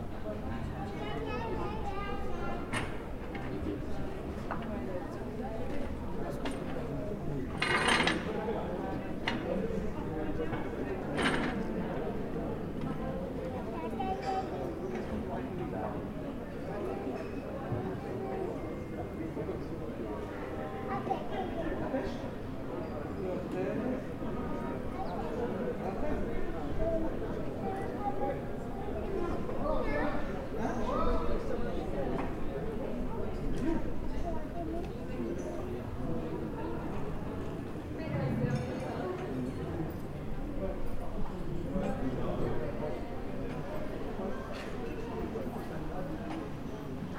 Rue Ducis, Chambéry, France - Place du Théâtre
Place du Théâtre Charles Dullin . Ceux qui viennent s'assoir pour boire un pot en terrasse et ceux qui viennent boire l'eau à la fontaine publique.